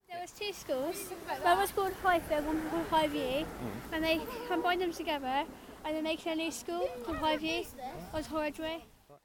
Efford Walk Two: Talking about High View - Talking about High View